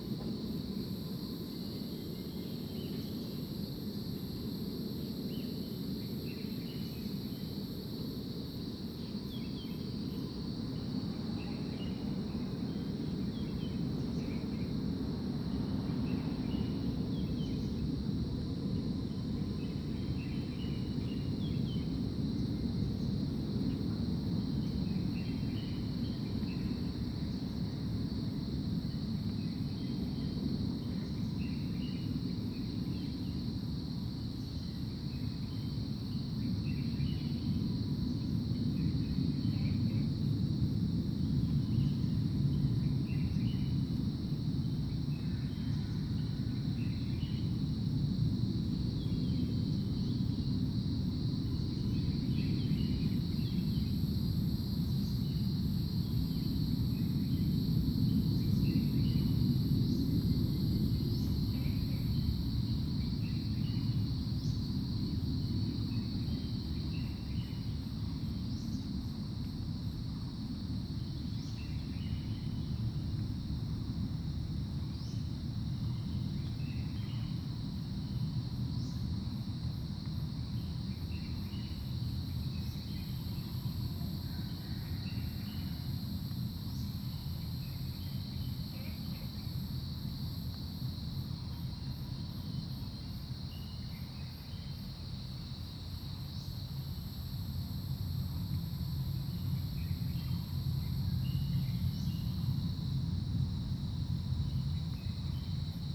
TaoMi Li., 綠屋民宿桃米里 - In the parking lot
Early morning, Bird calls, Aircraft flying through, Cicadas sound
Zoom H2n MS+XY